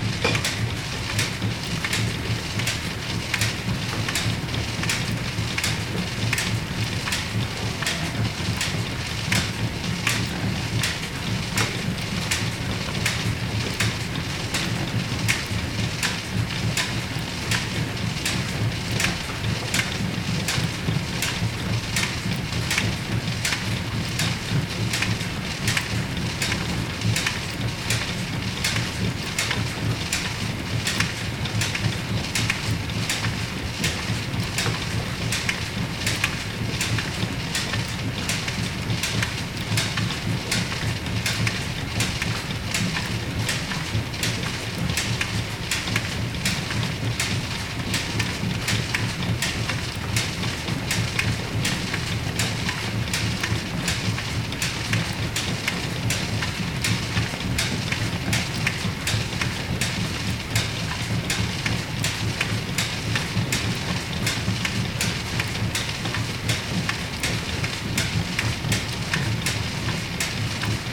enscherange, rackesmillen, gear drive - enscherange, rackesmillen, gear drive 02
On the first floor of the mill. The sound of the gear drive and the silent sound of milled flour recorded inside the mechanic.
Enscherange, Rackesmillen, Zahnradgetriebe
Im ersten Stockwerk der Mühle. Die Klänge des Zahnradgetriebes und das leise Rieseln von gemahlenem Mehl aufgenommen innerhalb der Mechanik
Au premier étage du moulin. Le bruit de l’engrenage et le léger bruit de la farine moulue, enregistrés à l’intérieur du mécanisme.
Enscherange, Luxembourg